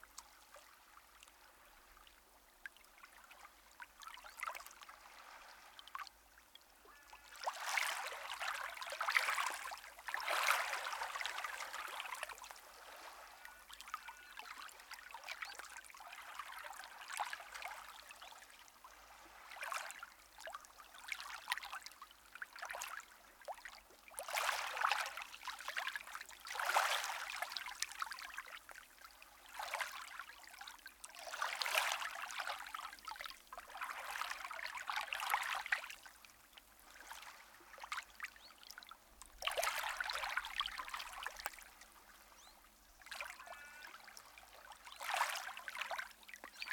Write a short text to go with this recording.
It was a very sunny and warm day when I recorded this, and I actually got sunburn from sitting out for too long. This section of coast is very sheltered, and there isn't much of a beach so the waves, such as they are, just lap against the shore. It's very peaceful, and traffic using the road in this area is minimal. The area is surrounded by farm land, and towards the end of the track you can hear a sort of breathing and rustling sound from the left side. This is a cow that had wandered over to say hello, and started eating the grass nearby. Recorded 24 August 2021, using the Sony PCM D100 and Audio Technica AT8022 stereo microphone.